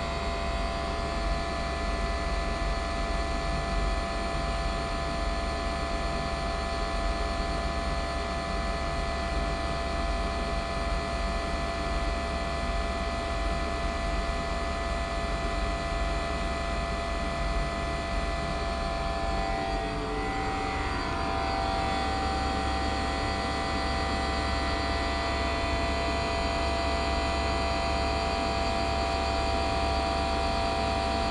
Staverton Park, Woodbridge, Suffolk UK - water pump
water pump house in Staverton Park
Marantz PMD620
England, United Kingdom, 2 May